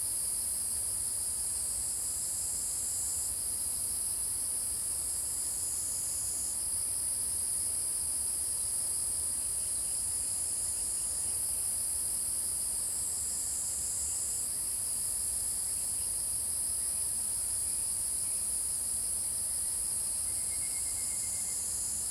青蛙ㄚ 婆的家, 桃米里, Puli Township - Cicada and Insects sounds
Early morning, Cicada sounds, Birds singing, Insects sounds
Zoom H2n MS+XY